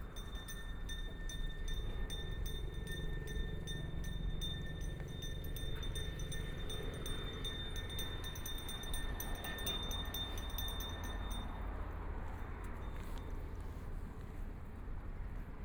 Garden Harbor Road, Shanghai - The sound of the bell
The sound of the bell, Riding a bicycle recycling garbage bells, Binaural recording, Zoom H6+ Soundman OKM II
29 November 2013, ~16:00